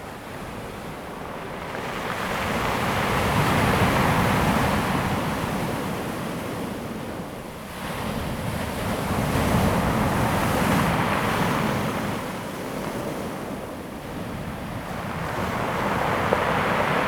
南田村, Daren Township - Sound of the waves
Sound of the waves, Circular stone coast
Zoom H2n MS +XY
5 September 2014, 14:51